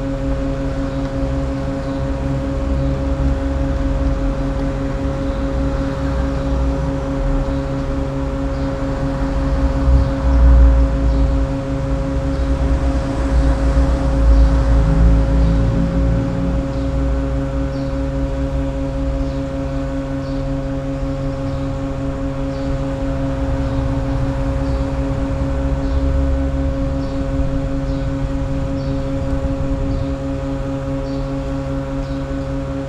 Ptuj, Slovenia - air-conditioning fan
air-conditioning fan on the outside of a building on a pedestrian walkway in ptuj
19 June 2012